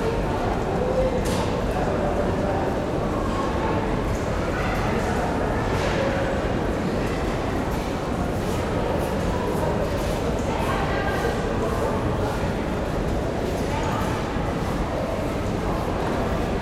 berlin, karl-marx-straße: neukölln arcaden - the city, the country & me: neukölln arcaden, third floor
the city, the country & me: february 18, 2012
18 February 2013, ~11:00